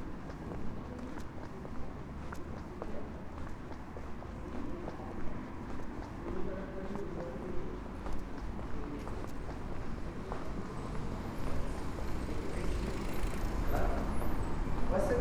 Lithuania, Vilnius, a walk
a walk through machine-free part of Ausros Vartai street